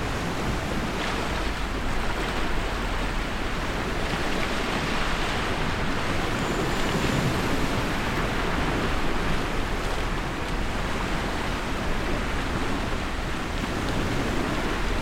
Bd Stephanopoli de Comene, Ajaccio, France - Ajaccio, France Beach 01
wave sound road noise
Captation : ZOOM H6